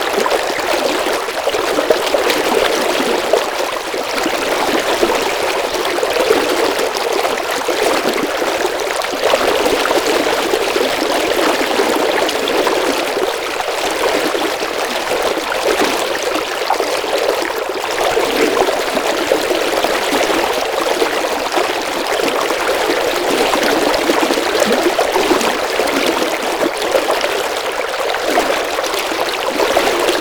river Drava, Loka - legs and river, close-up flux